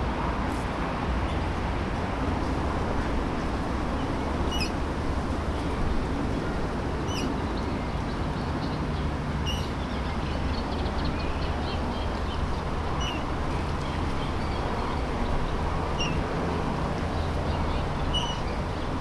Mayor Franz-Georg
when you imagined
this place
over a hundred years ago
as the favourite walk
of the people
and the adornment
of the town
did you forehear
the noise of the cars
and the trains
even deep down
in the lake?
What are the swans
the geese and the ducks
dreaming about?
What were you doing
up there in the elm
and what did you hear
when you fell?
Can you hear me?